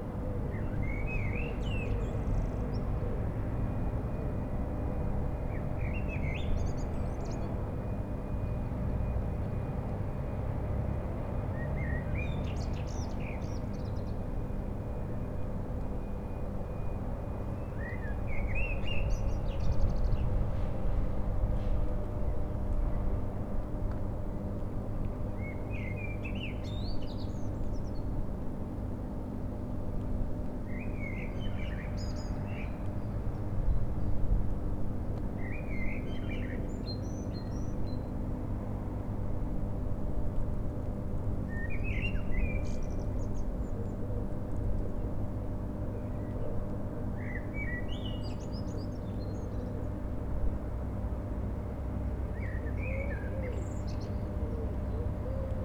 Niederaußem, powerplant - transformer station
hum and buzz of transformer station at powerplant Niederaußem near Cologne